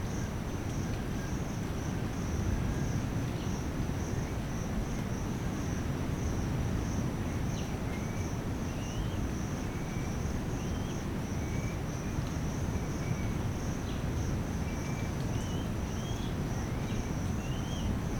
Mahale NP, Tanzania - ambiant birds and waves
Recorded on Mini-disc (back in the day!)